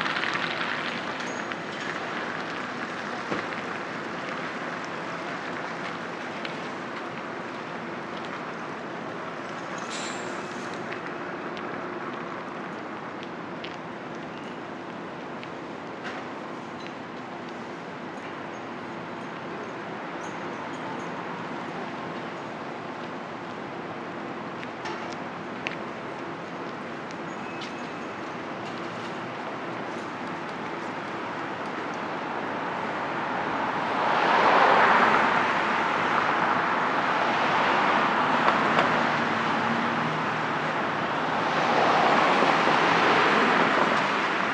Peel / Sainte-Catherine, Montréal, QC, Canada - Peel Street
Recording at the corner of Peel St and Saint-Catherine St. At one of the larger crossroads, we hear some morning commuters and workers travelling through. The sounds of winter tires rolling on the cold concrete streets, the sounds of a truck reversing into an alley, and with the lack of pedestrians there is little organic life present at this junction.
17 December, 09:15